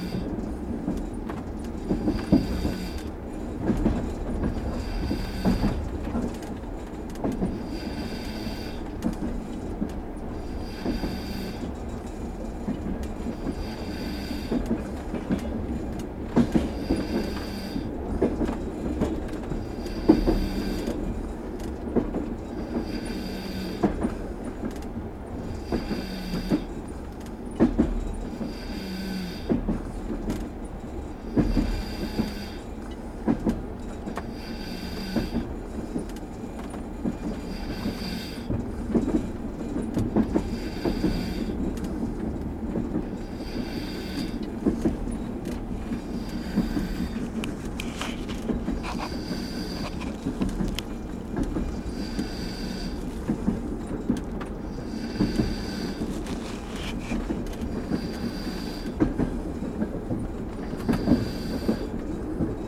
Luh, Zakarpats'ka oblast, Ukraine - Sleeping through the Carpathians
Night-journey on the train 601Л from L'viv to Chop, platskartny (3rd class bunks), binaural recording.